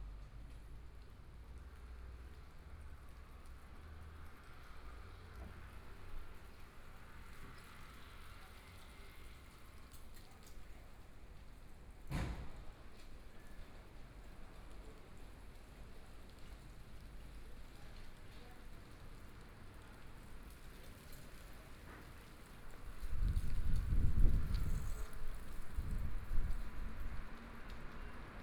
Wenchang Rd., Taitung City - Traffic Sound
Traffic Sound, Binaural recordings, Zoom H4n+ Soundman OKM II ( SoundMap20140117- 1)
Taitung City, Taitung County, Taiwan, 17 January